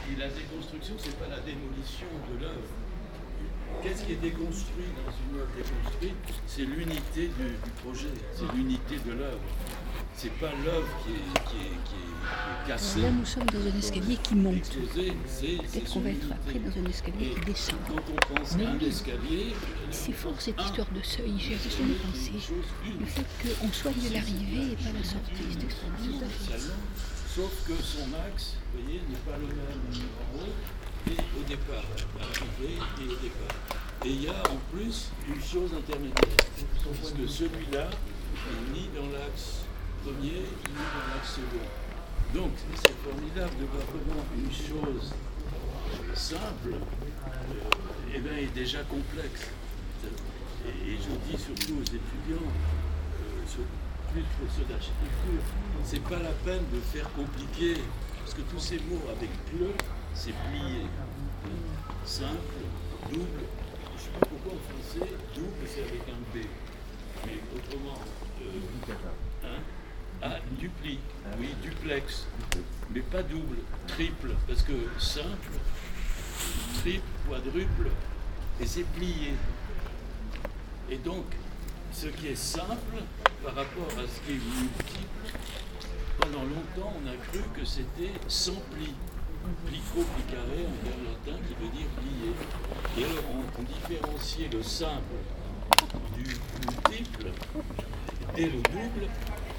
Carrer de la Plaça, Portbou, Girona, Spagna - Port Bou October 2019: le Maître et les Disciples
Port Bou, Thursday October 3rd, 11:51 a.m. A group of artists, architects, philosophers, musicians, students staying and walking in dialogue on the stairs of Carre de la Plaça.